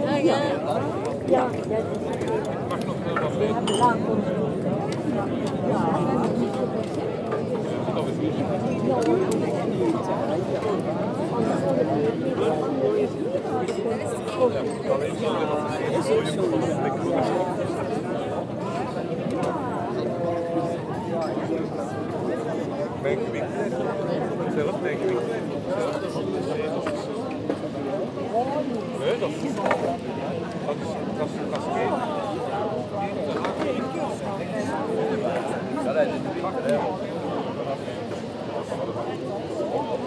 Mechelen, Belgique - Mechelen main square
Quiet discussions on the bar terraces, sun is shinning, very much wind, the bell is ringing twelve.
Mechelen, Belgium